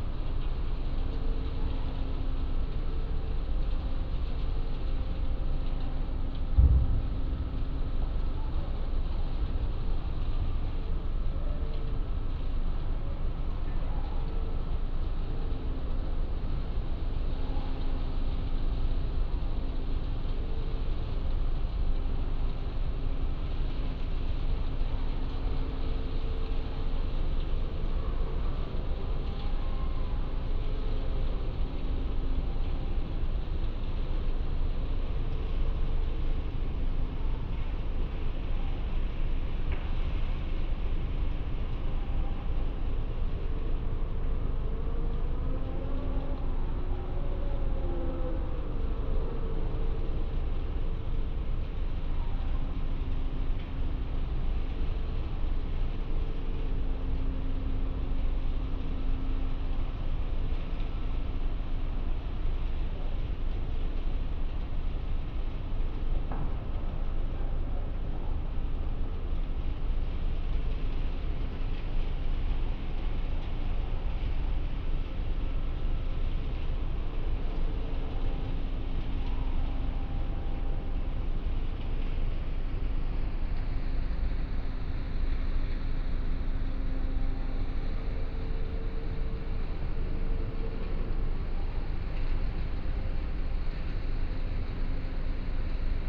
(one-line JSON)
{"title": "Berlin, Plänterwald, Spree - winter evening ambience", "date": "2018-02-09 18:35:00", "description": "place revisited on a winter Friday evening. No sounds from coal freighters shunting, but the busy concrete factory\n(SD702, MHK8020 AB)", "latitude": "52.49", "longitude": "13.49", "altitude": "23", "timezone": "Europe/Berlin"}